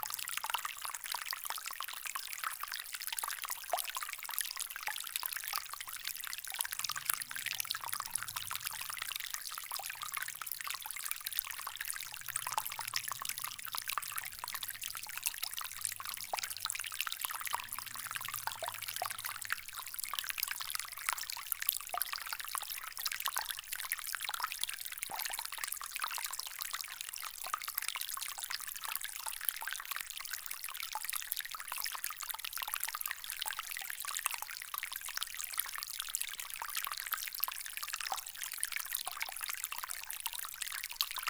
Largentière, France - Small stream
In an underground silver mine, a small stream in a sloping tunel.